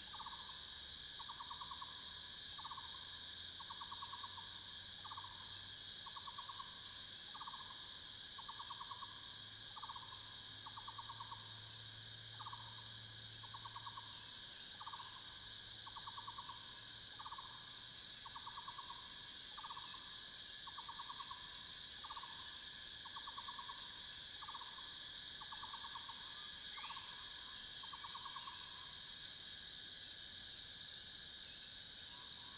油茶園, 五城村 Yuchih Township - In the morning
Cicada sounds, Birds called, early morning
Zoom H2n MS+XY